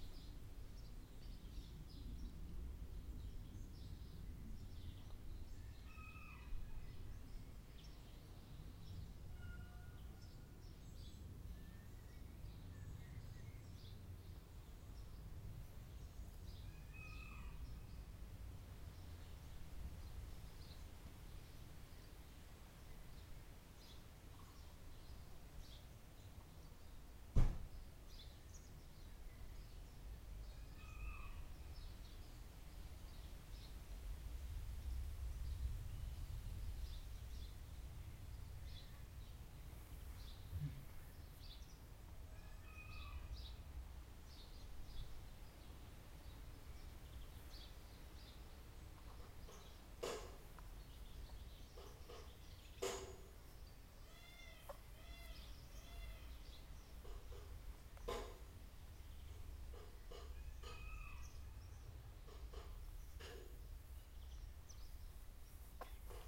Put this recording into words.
recorded june 1, 2008 - project: "hasenbrot - a private sound diary"